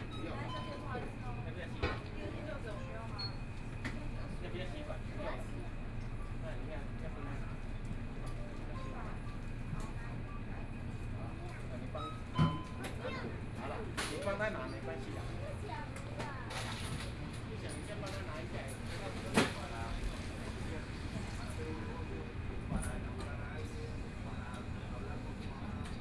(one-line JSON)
{"title": "中正區東門里, Taipei City - soundwalk", "date": "2014-03-21 20:07:00", "description": "Starting from convenience store, Out of the shop walked across\nBinaural recordings", "latitude": "25.04", "longitude": "121.52", "altitude": "12", "timezone": "Asia/Taipei"}